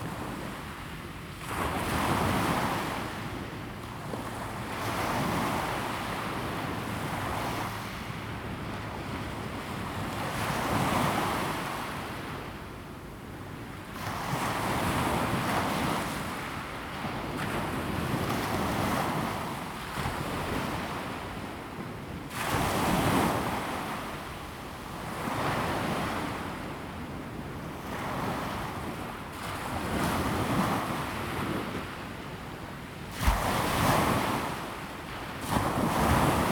台1線, Fangshan Township, Pingtung County - Wave crash
On the beach, traffic sound, Sound of the waves, Wave crash
Zoom H2N MS+ XY
Fangshan Township, Pingtung County, Taiwan, 24 April 2018, ~10:00